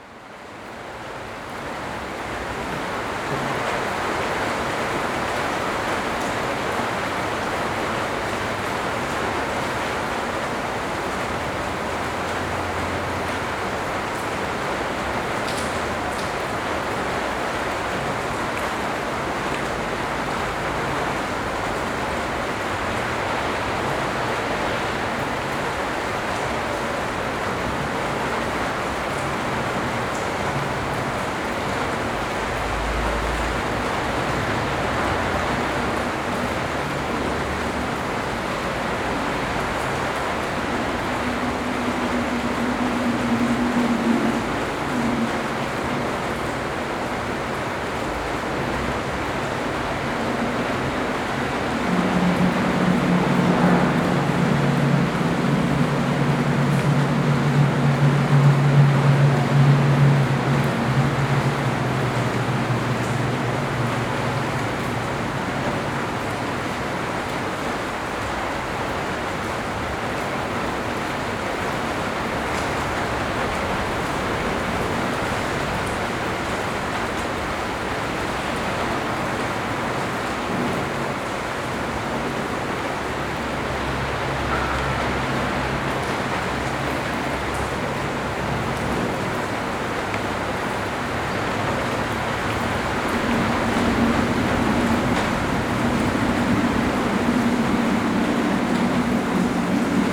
gimnazija, Maribor, Slovenia - raindrops and needles
rain from the 2nd floor of maribor's 2. gimnazija highschool building, with the mics near a row of slightly open floor-to-ceiling windows. in the background can be heard sounds coming from ignaz schick, martin tétrault, and joke lanz's turntable workshop, taking place on the other side of the building.
26 August 2012, ~14:00